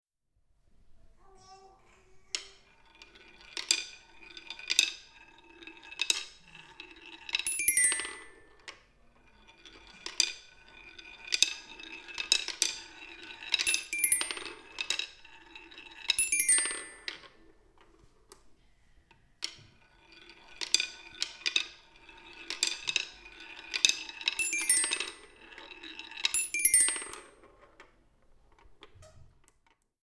Gesundheitszentrum Bergmannstr. - kugelbahn @ kinder doctor
19.01.2009 11:15
klang einer kugelbahn beim kinderarzt
sound of a ball path toy at the children doctor's practice
Berlin, Deutschland, 19 January 2009, 11:15